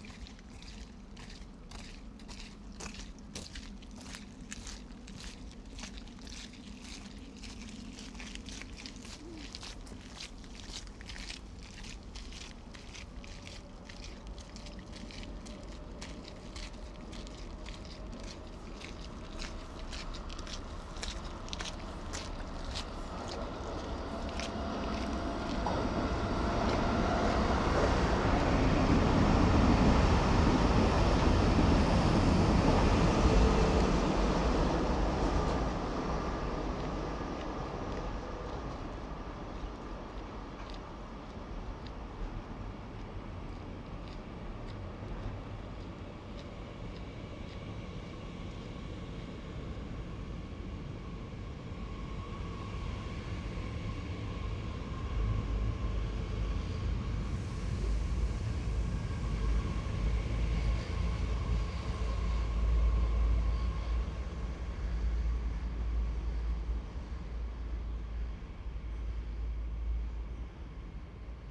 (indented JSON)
{
  "title": "Fußgängerbrücke an der Sonnenburger Straße, Unnamed Road, Berlin, Deutschland - S-bahn bridge",
  "date": "2021-12-13 23:41:00",
  "description": "Listening to the city In the middle of the small S-Bahn pedestrian bridge late at night.",
  "latitude": "52.55",
  "longitude": "13.41",
  "altitude": "51",
  "timezone": "Europe/Berlin"
}